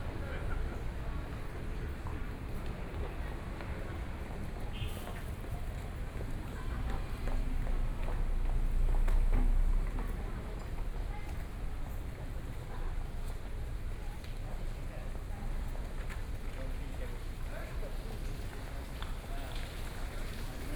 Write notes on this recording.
walking in the Street, Through a variety of different shops, Please turn up the volume a little, Binaural recordings, Sony PCM D100 + Soundman OKM II